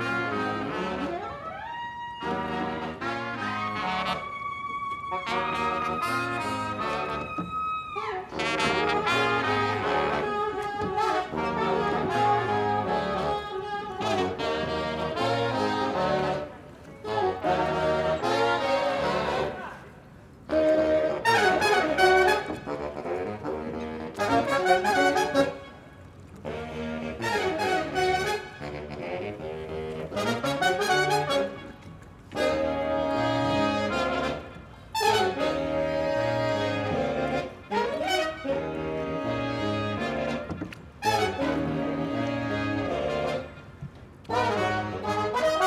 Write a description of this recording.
Ryan Quigley, Paul Towndrow, Konrad Wiszniewski, Allon Beauvoisin